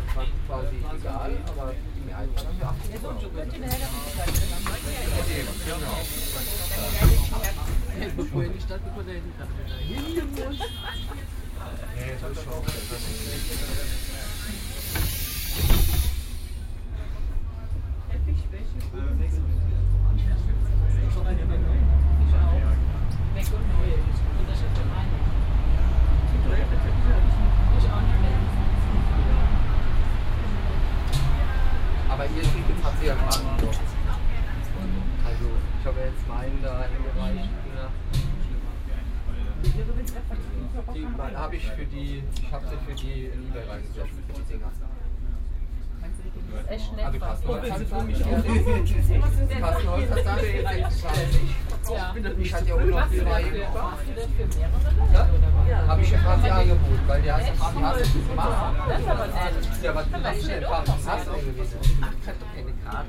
Public Bus, Koblenz, Deutschland - Bus to main station Koblenz
Two stations, from Löhr Center to main station, in a bus. Friday afternoon, people are talking.